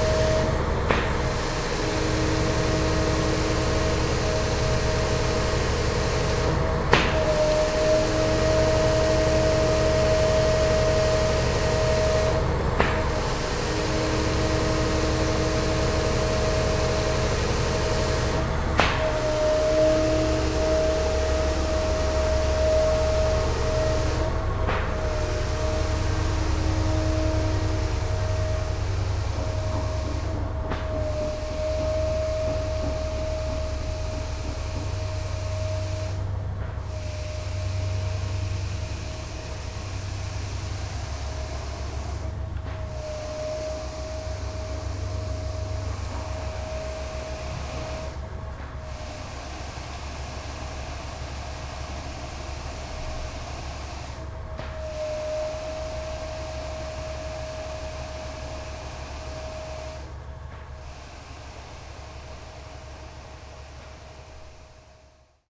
cologne, mainzerstr, containerabholung - koeln, sued, mainzerstr, betonmischpumpe
arbeitsgeräusche einer betonmischpumpanlage
soundmap nrw
project: social ambiences/ listen to the people - in & outdoor nearfield recordings
15 June 2008, 12:11